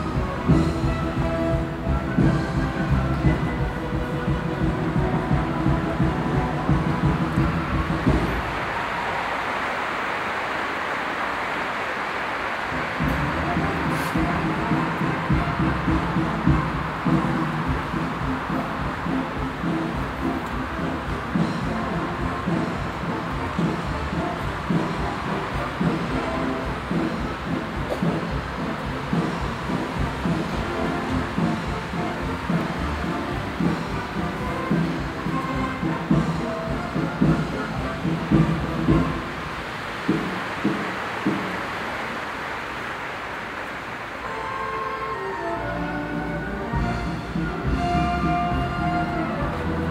cologne, neumarkt, circus roncalli
soundmap: cologne/ nrw
neumarkt atmo während einer abendlichen vorstellung des circus roncalli
project: social ambiences/ listen to the people - in & outdoor nearfield recordings